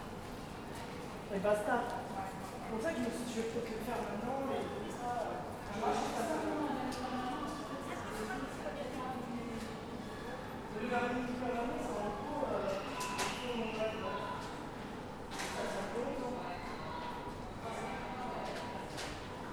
Rue Gabriel Péri, Saint-Denis, France - Galerie Marchande Rosalie
This recording is one of a series of recording mapping the changing soundscape of Saint-Denis (Recorded with the internal microphones of a Tascam DR-40).
27 May 2019